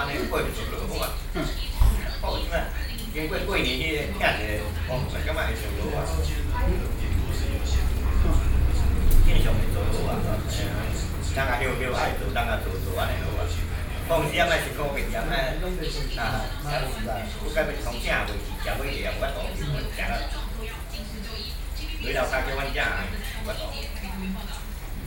Beitou, Taipei - In the restaurant
In the restaurant, Several workers are to discuss the news on television, Sony PCM D50 + Soundman OKM II
北投區, 台北市 (Taipei City), 中華民國